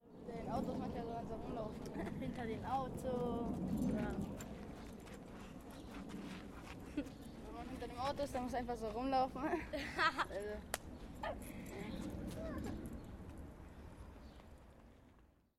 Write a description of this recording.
kids talking about their hideouts